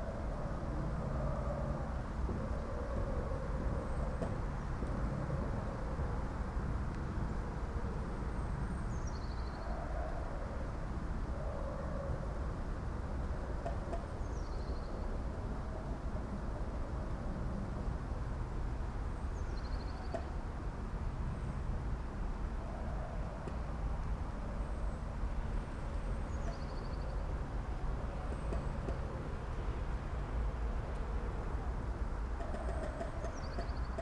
two trees, piramida - creaking with derby
creaking trees, moved by wind and accompanied with football derby sonic impression